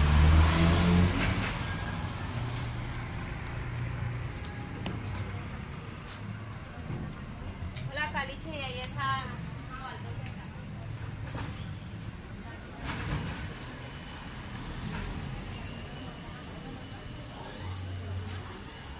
Cl. 20 Sur #37-2 a, Villavicencio, Meta, Colombia - Paradero de Buses- San jorge
Ambiente sonoro del paradero de buses en el barrio San jorge.